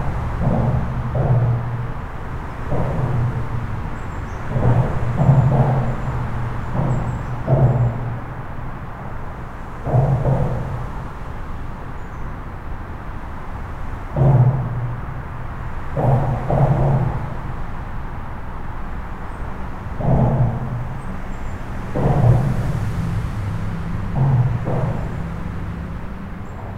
Court-St.-Étienne, Belgique - N25 à Suzeril
A dense trafic on the local highway, called N25. The bangs sounds are coming from a bridge.
Belgium, 3 October